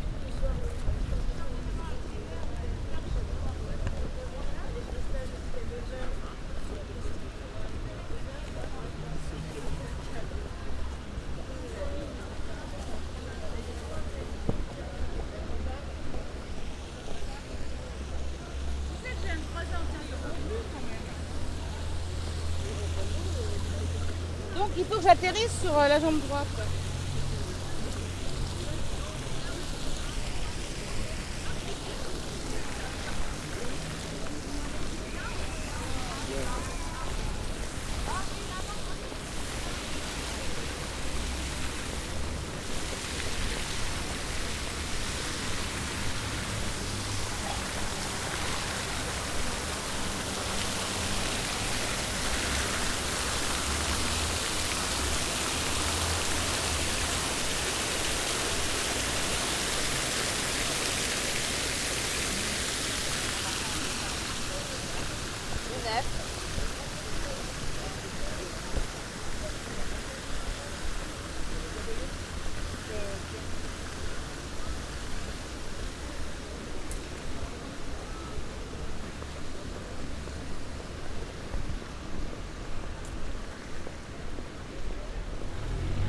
sortie parking opéra, Lyon, France - On va où là
Lyon sortie du parking de l'Opéra par l'ascenseur direction place Pradel. Je viens de récupérer mon DAT qui était en réparation, 2 micros shure BG 4.0 dans un bloc de mousse avec poignée spéciale enregistrement de la marche, enregistreur DAP1 Tascam. Extrait d'un CDR gravé en 2003.
France métropolitaine, France, 30 September 2003, ~12:00